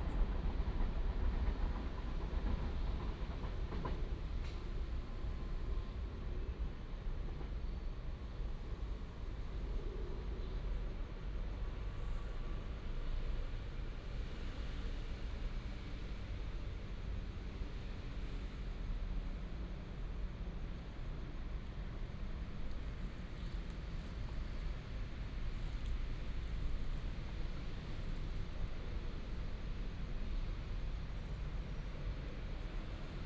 Xiping Rd., Douliu City, Yunl 斗六市 - The train runs through
Next to the railway
Yunlin County, Taiwan, 3 March 2017